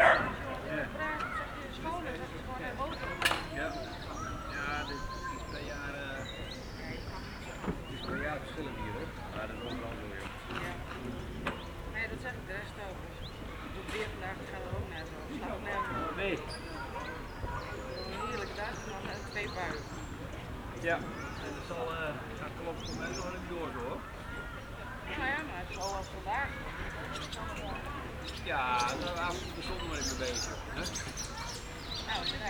{"title": "workum, het zool: marina, berth c - the city, the country & me: marina berth", "date": "2012-08-04 19:31:00", "description": "the city, the country & me: august 4, 2012", "latitude": "52.97", "longitude": "5.42", "timezone": "Europe/Amsterdam"}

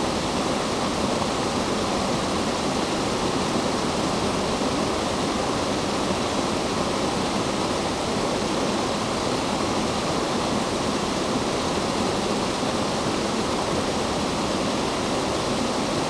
{"title": "Lindrick with Studley Royal and Fountains, UK - The Cascade ...", "date": "2016-09-15 14:00:00", "description": "The Cascade ... Studley Royal Water Gardens ... lavalier mics clipped to sandwich box ... warm sunny afternoon ... distant Canada geese ...", "latitude": "54.12", "longitude": "-1.57", "altitude": "75", "timezone": "Europe/London"}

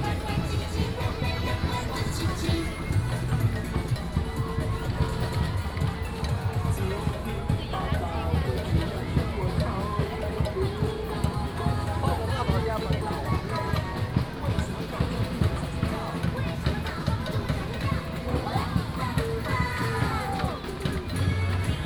{"title": "美猴橋, 信義區仁壽里, Keelung City - Festival", "date": "2016-08-16 20:11:00", "description": "Festivals, Walking on the road, Variety show, Keelung Mid.Summer Ghost Festival", "latitude": "25.13", "longitude": "121.75", "altitude": "13", "timezone": "Asia/Taipei"}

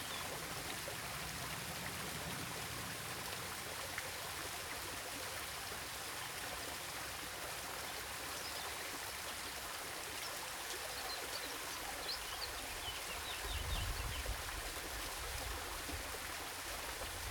{"title": "Jardin Botanique, Nice, France - Waterfall / birdsong", "date": "2014-06-22 15:58:00", "description": "The waterfall at the Jardin Botanique. I can only recommend the cacti at this place, the rest of the garden is not well maintained.\nRecorded with a ZOOM H1, Audacity Hi-pass filter used to reduce wind-noise.", "latitude": "43.69", "longitude": "7.21", "altitude": "103", "timezone": "Europe/Paris"}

